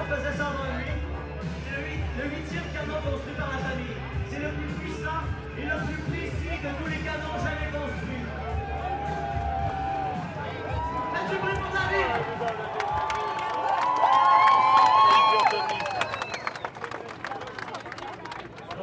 World Listening Day.
Festival Juste Pour Rire